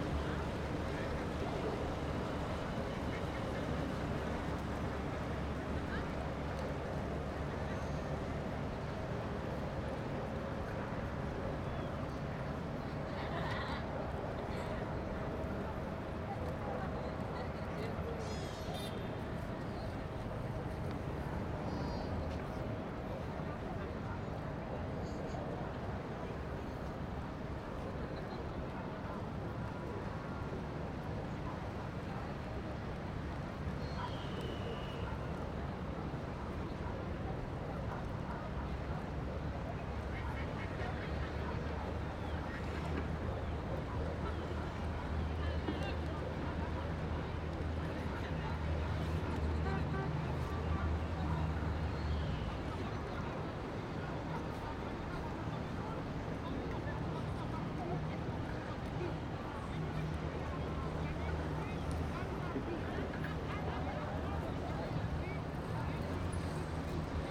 Yungay, Valdivia, Los Ríos, Chili - AMB VALDIVIA HARBOUR LARGE SEA LIONS BIRDS BOAT WET TRAFFIC FAR MS MKH MATRICED
This is a recording of the harbour located in Valdivia. I used Sennheiser MS microphones (MKH8050 MKH30) and a Sound Devices 633.